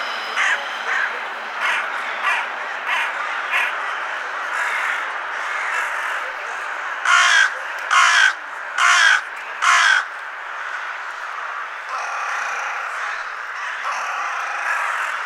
Stadtbahnbogen, Berlin, Germany - CROWS VS LOCKDOWN FIELD RECORDING BIRDSONG BERLIN MITTE 2020 12 26 LOCKDOWN
K!ll OH
SONY PCM 100